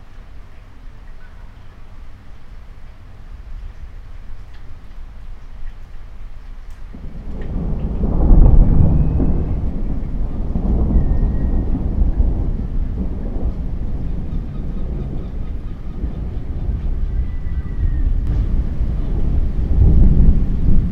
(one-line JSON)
{"title": "Park De Horst Den Haag, Nederland - Thunderstorms at night", "date": "2020-06-12 01:41:00", "description": "Recorded with a Philips Voice Tracker VT7500\nTotlal lenght has been shortened to just under 3 minutes.", "latitude": "52.09", "longitude": "4.36", "altitude": "2", "timezone": "Europe/Amsterdam"}